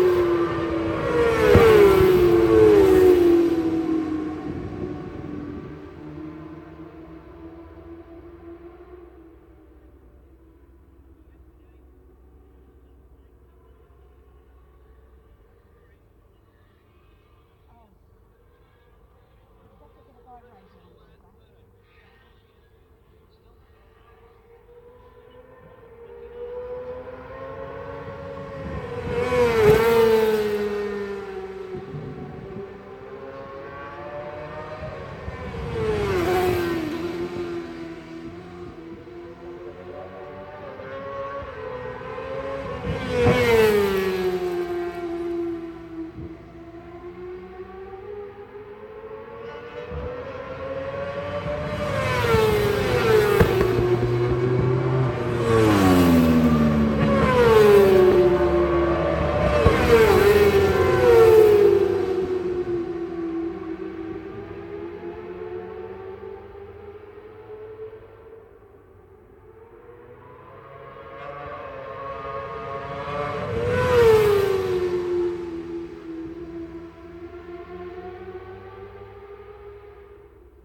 Brands Hatch GP Circuit, West Kingsdown, Longfield, UK - world superbikes 2004 ... supersport ...
world superbikes 2004 ... supersport 600s qualifying ... one point stereo mic to minidisk ... date correct ... time not ...